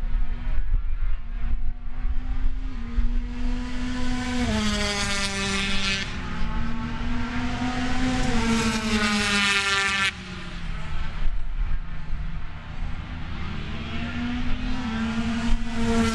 Donington Park Circuit, Derby, United Kingdom - British Motorcycle Grand Prix 2003 ... 125 ...
British Motorcycle Grand Prix 2003 ... free practice ... one point stereo mic to minidisk ... quite some buffeting ... time approx ...
11 July 2003, 09:00